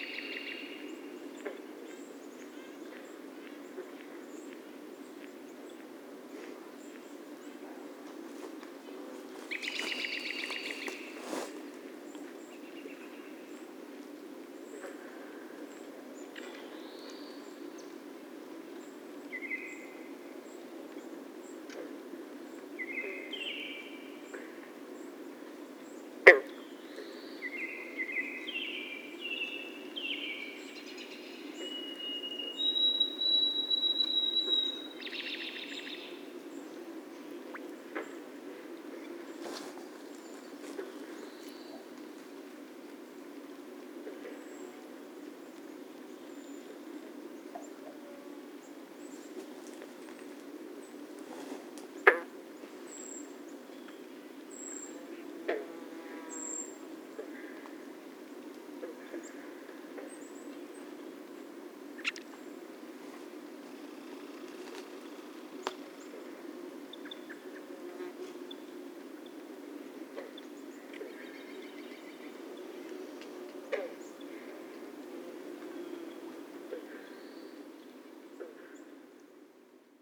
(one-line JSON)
{"title": "Mikisew Provincial Park, Ontario, Canada - Beaver pond ambience", "date": "2016-07-20 20:30:00", "description": "Pond ambience including several beaver tail warning slaps. Frogs interject. A sawyer beetle larva is chewing away on a log nearby. Telinga stereo parabolic microphone with Tascam DR-680mkII recorder.", "latitude": "45.82", "longitude": "-79.52", "altitude": "369", "timezone": "America/Toronto"}